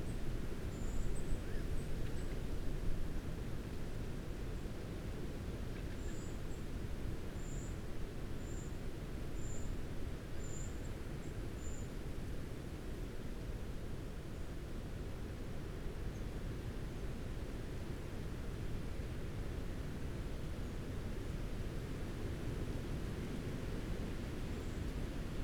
creaking tree branches ... add their calls to those of the birds that share the same space ... blue tit ... pheasant ... buzzard ... crow ... fieldfare ... blackbird ... treecreeper ... wren ... dpa 4060s in parabolic to MixPre3 ...
Malton, UK, 18 November, 07:30